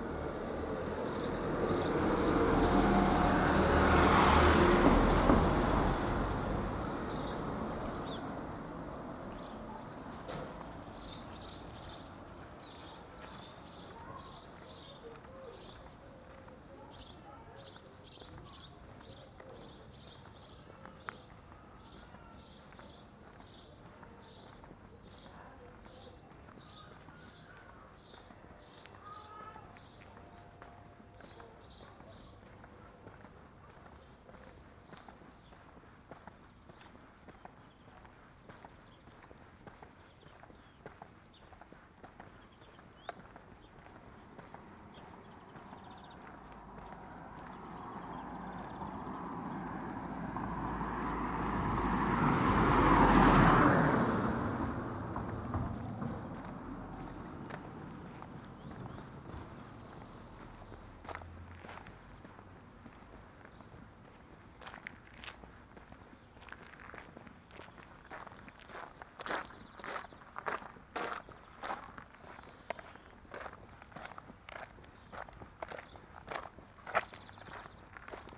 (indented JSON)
{
  "title": "Rue de Bourbuel, Niévroz, France - A walk along the street",
  "date": "2022-07-22 10:20:00",
  "description": "birds, cars, tractor, sound of my footsteps on the pavement.\nTech Note : Sony PCM-M10 internal microphones.",
  "latitude": "45.82",
  "longitude": "5.06",
  "altitude": "186",
  "timezone": "Europe/Paris"
}